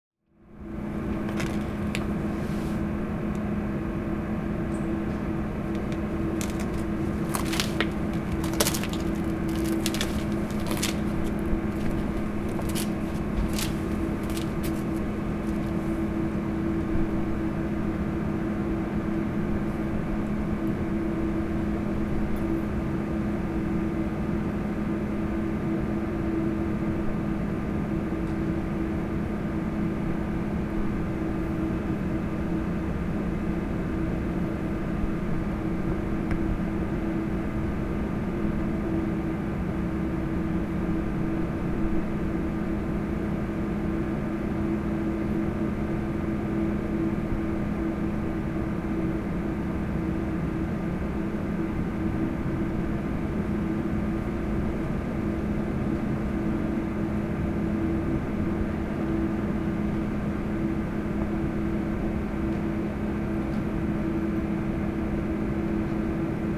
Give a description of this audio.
The recording was made within the framework of a workshop about sound ecology of the class for sound art at Neue Musik Leipzig. Passing by antenna transformer station, bicycles, people, rehearsal in a music school. Neue Musik Leipzig - Studio für Digitale Klanggestaltung.